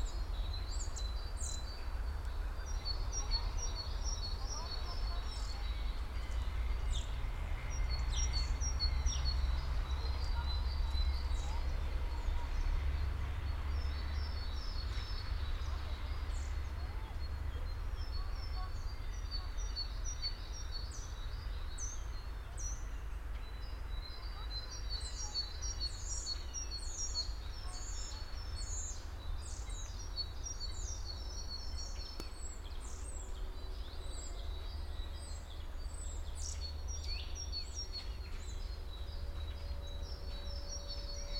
Martha-Stein-Weg, Bad Berka, Deutschland - A Feint Sign Of Spring Germany: Woodpecker in Space
Binaural recording of a feint sign of Spring 2021 in a Park in Germany. A Woodpecker can be heard in the right channel. There is a perception of height with the subtle calls of other birds. Date: 20.02.2021.
Recording technology: BEN- Binaural Encoding Node built with LOM MikroUsi Pro (XLR version) and Zoom F4.
Thüringen, Deutschland, 20 February 2021, 13:15